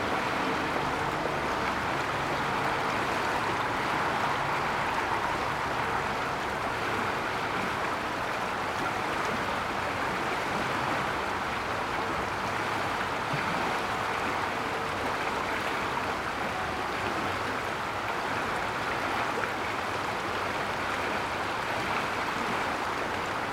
River flow and funeral bells in the distance.
Tech Note : Sony PCM-D100 internal microphones, wide position.